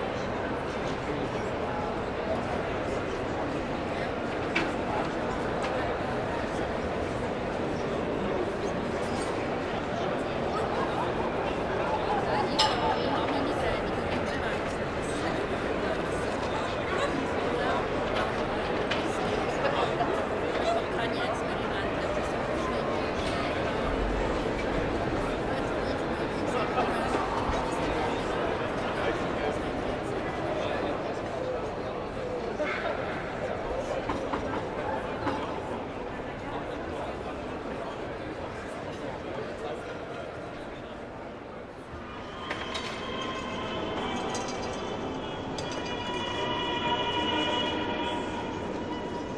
Markthalle Basel, Basel, Schweiz - alte Markthalle
Scenery in the alte Markthalle with its huge dome of 26 meters height which reflects with great echo all the noise produced by people eating & drinking, children playing and marquees preparing fresh food.
Zoom H6, MS Microphone
Basel, Switzerland, 10 February 2018, 15:00